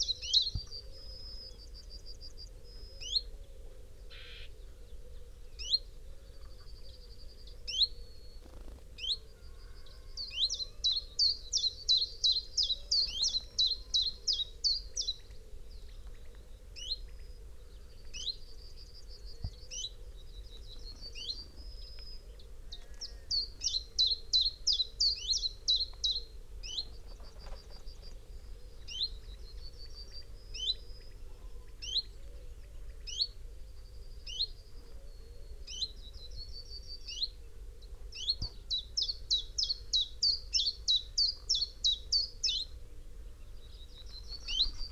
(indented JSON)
{
  "title": "Malton, UK - chiffchaff nest site ...",
  "date": "2022-07-16 05:20:00",
  "description": "chiffchaff nest site ... male in tree singing ... female calling as she visits nest with food ... possibly second brood ... xlr sass on tripod to zoom h5 ... bird calls ... song ... from ... yellowhammer ... dunnock ... eurasian wren ... whitethroat ... carrion crow ... pheasant ... quail ... herring gull ... background noise ...",
  "latitude": "54.12",
  "longitude": "-0.54",
  "altitude": "83",
  "timezone": "Europe/London"
}